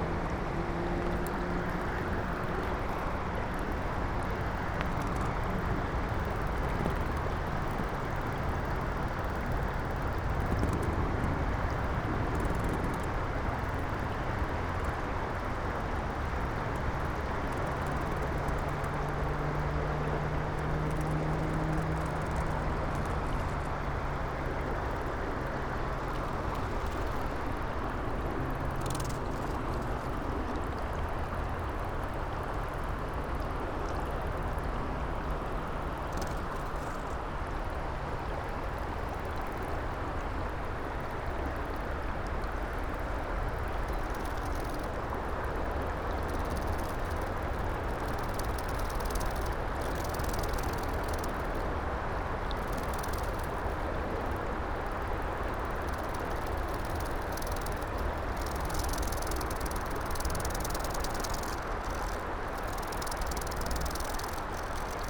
river Drava, Dvorjane - tiny stalk of poplar tree on paper
tiny stem, moved by water flow and wind, touching unfolded book, spoken words